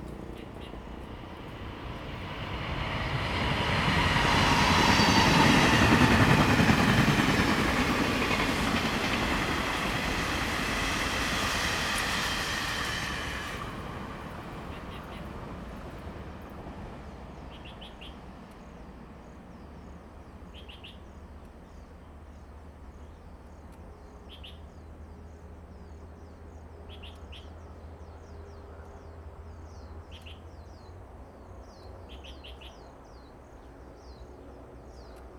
景美村, Sioulin Township - Traveling by train
Traveling by train, Birdsong sound, The weather is very hot, Small village, Traffic Sound
Zoom H2n MS+XY
Hualien County, Taiwan, 27 August 2014